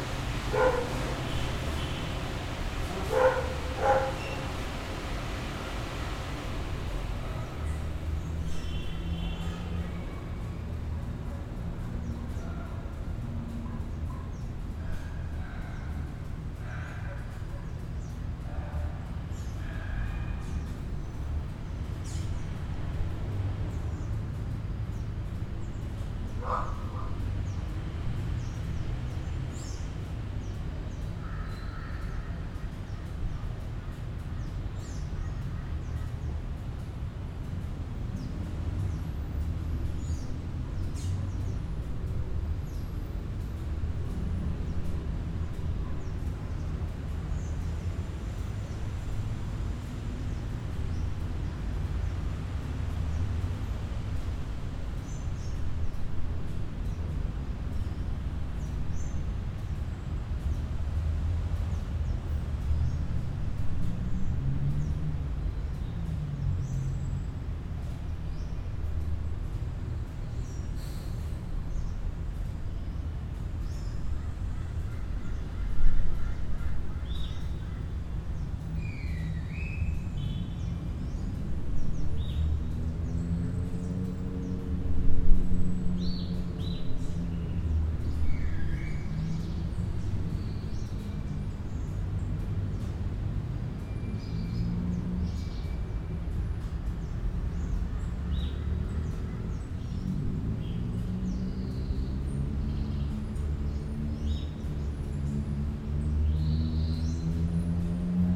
Cra., Medellín, Belén, Medellín, Antioquia, Colombia - Parqueadero
A simple vista parece un simple lugar donde se dejan estacionados los autos, más conocido como “parqueadero”. La verdad tiene un significado mucho más especial, los vehículos suelen ser los frutos del esfuerzo de sus dueños, símbolo de que continuar luchando a pesarde las adversidades tiene un gran valor, tanto es así que merecen un lugar especial donde ser custodiados con los mejores cuidados